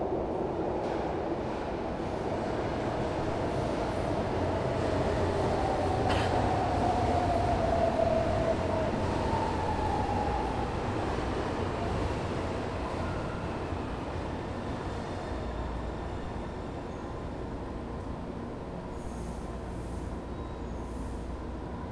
Av. Paulista - Bela Vista, São Paulo - SP, 01310-200, Brasil - São Paulos Subway - Consolação
Inside Consolacão Subway station at Paulista Avenue, São Paulo, Brazil. Recorded with TASCAM DR-40 with internal microphones.